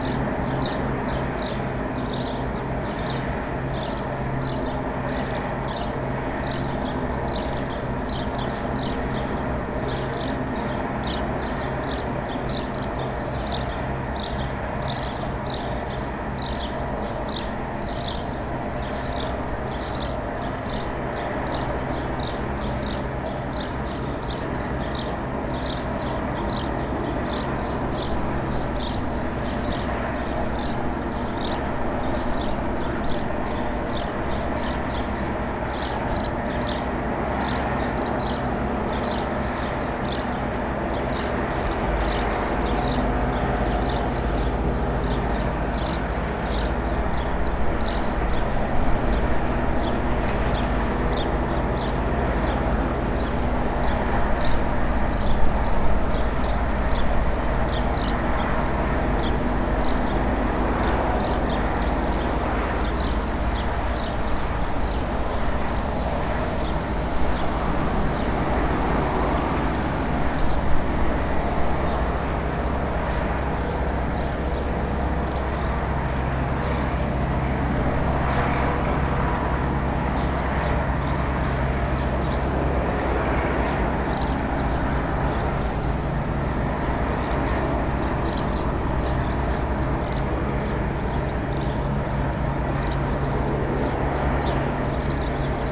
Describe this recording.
Ambiente el el campo de Vilanova. Gorriones, molinillo y una segadora. WLD